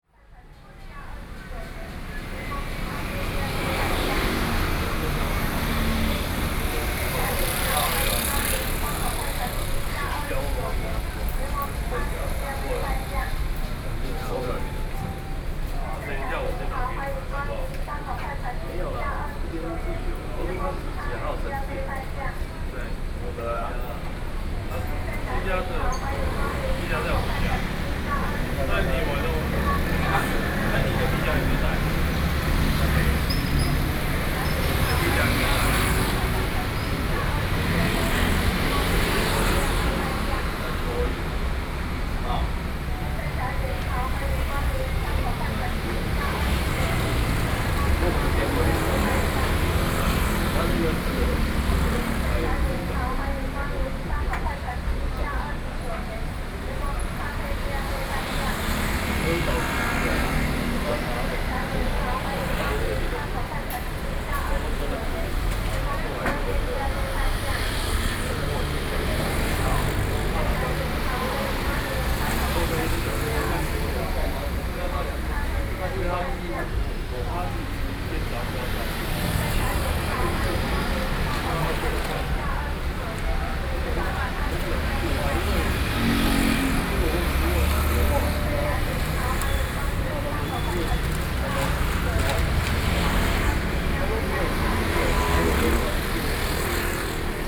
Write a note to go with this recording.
In front of the entrance convenience stores, Sony PCM D50 + Soundman OKM II